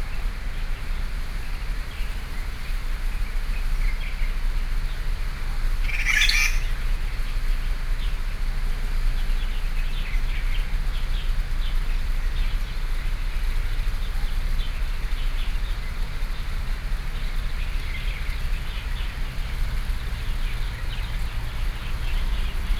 北投硫磺谷遊憩區, Taipei City - bird